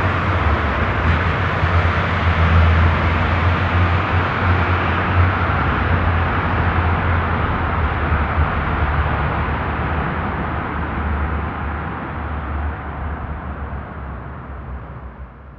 velbert, langenberg, dr.hans karl glinz str, autotunnel - velbert, langenberg, dr.hans karl glinz str, autotunnel 03
mono richtmikrophon aufnahme in autotunnel, morgens
soundmap nrw: social ambiences/ listen to the people - in & outdoor nearfield recordings